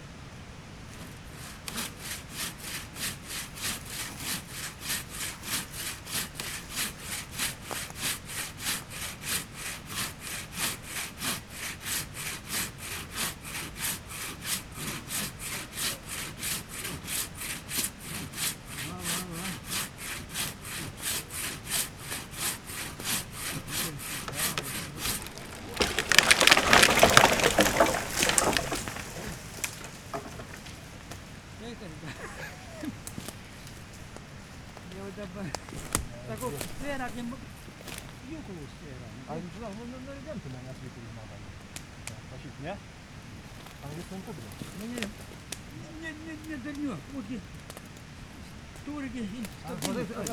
Lithuania, Utena, men cutting a tree
men cutting firewoods
25 October, ~14:00